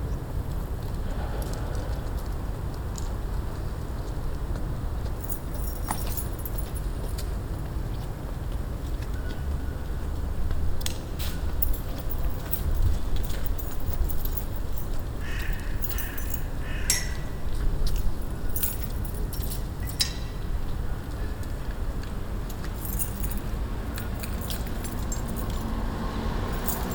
Fahrradschloss aufschließen. Lawaetzweg. 31.10.2009 - Große Bergstraße/Möbelhaus Moorfleet
Große Bergstraße/Lawaetzweg 7
Hamburg, Germany, 31 October 2009